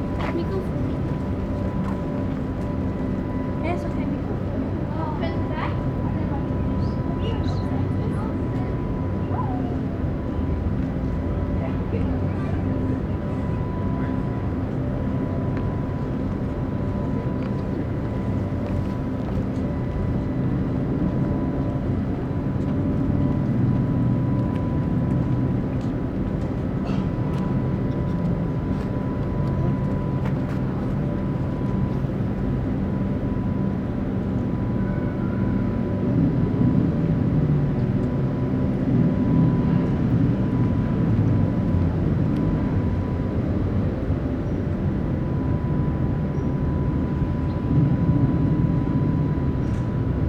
Schiffshebewerk Niederfinow - the city, the country & me: noise of the boat lift
noise of boat lift
the city, the country & me: september 5, 2010
5 September, ~14:00